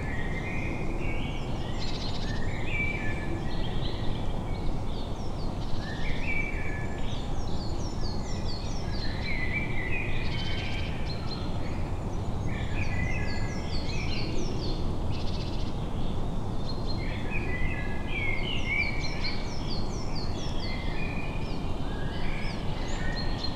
Ленинский район, Московская область, Россия - Noise pollution.
Sony ECM-MS2 --> Marantz PMD-661 mod --> RX3(Declip, Limiter, Gain).
Vidnoye, Moskovskaya oblast, Russia, 21 April 2014